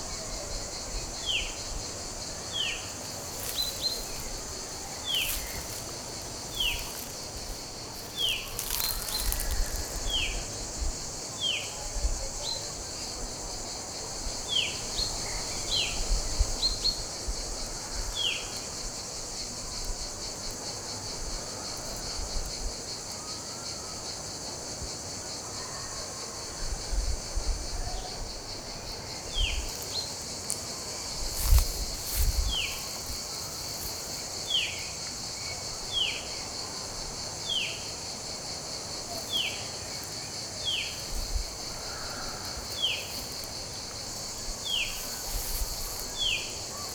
Abandoned Soviet sanatorium, Sudak, Crimea, Ukraine - Soviet ruins & new Russian tourist beach cafés, devastated, all
Since 1783, means for Russians ever since, they come to recover on the Crimean seaside. Here you listen into sundried plants, insects and birds occupying this empty rotting away complex of beautiful sanatoria buildings. Then i take the zoom-recorder with me to bring it slowly closer to the beach, which is also left behind by tourism, only a few pro-annexion holiday-winners from russia promenade, the music is still playing for the memories of past summers full of consumption and joy.